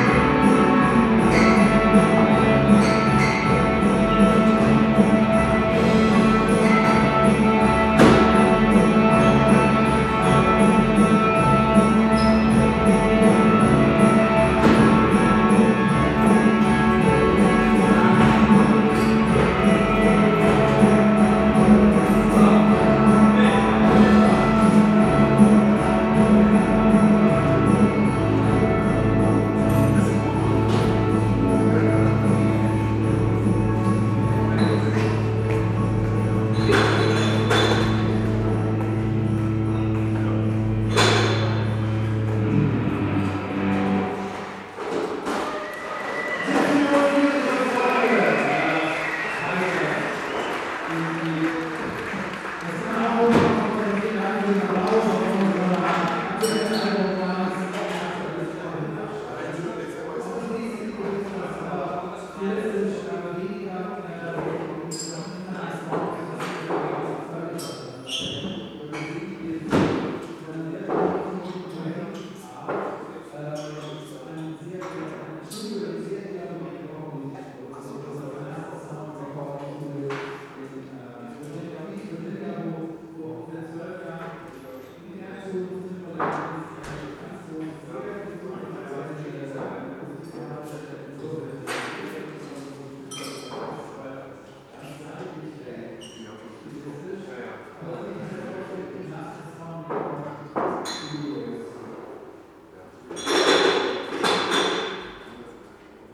berlin, hallesches ufer: - the city, the country & me: foyer, bar
foyer/bar ambience, music of the band f.s.k. through an open door to the concert hall
the city, the country & me: december 12, 2012
Berlin, Germany, 12 December 2012, ~22:00